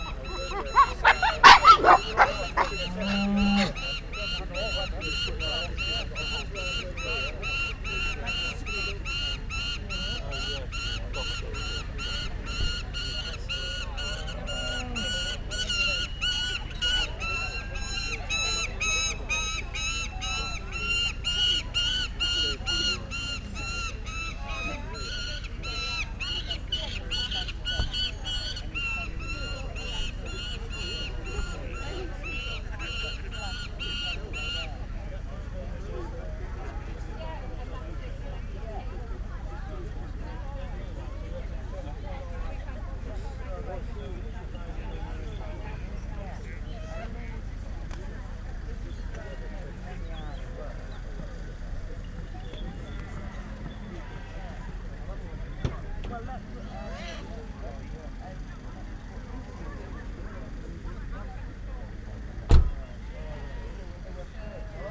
Red Way, York, UK - Farndale Show Soundscape ...
Farndale Show Soundscape ... sounds from the show ground ... stood close to a falconry display team ... lavalier mics clipped to baseball cap ... the bird calling is a lanner saker peregrine hybrid ... voices ... public address system ... dogs ... all sorts of everything ... etc ...
27 August